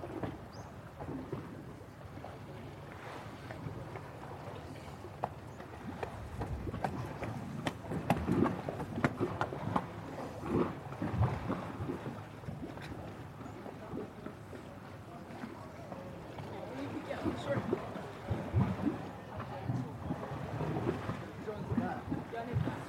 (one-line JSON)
{"title": "Boys tombstoning off Portland Bill", "date": "2010-09-21 11:41:00", "latitude": "50.52", "longitude": "-2.45", "timezone": "Europe/Berlin"}